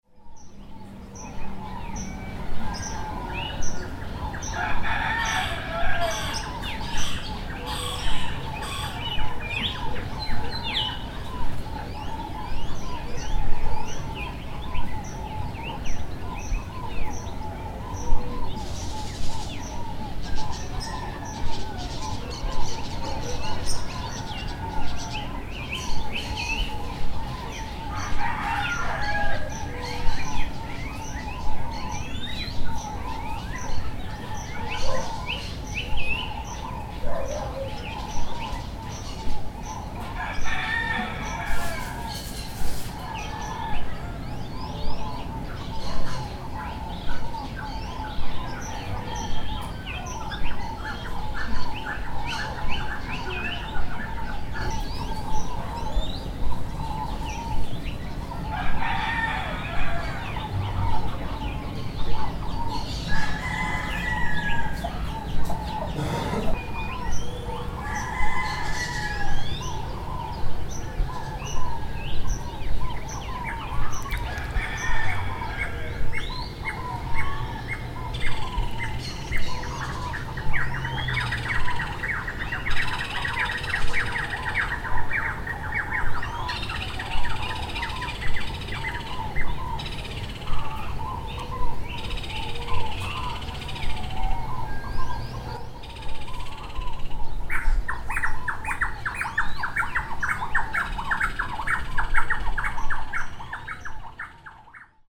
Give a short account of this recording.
Amanecer desde mi hogar en Taganga, gallos, loras y muchas otras aves